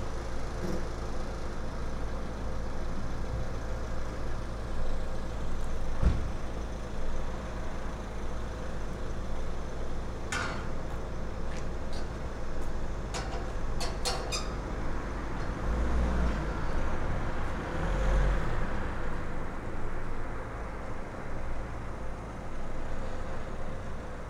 Niévroz, Rue Henri Jomain, Christmas melody coming from an electronic device.
SD-702, Me-64, NOS.
24 December 2011, 18:18